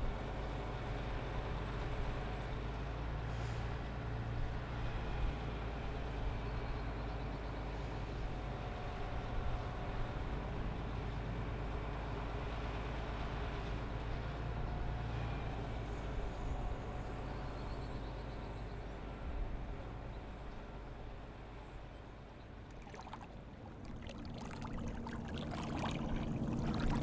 Montreal: Lachine Canal: Municipal Pier - Lachine Canal: Municipal Pier
Condensed from excepts over one summer. The piece begins with excerpts from two remarkable days of extreme weather change in the spring. One April 13, over the pier, seeming close to flooding. The next day, small ice pellets are thrust against the shoreline by the wind, and fill the holes between big rocks, waves making baroque melodies as they crash through.
1 July 2001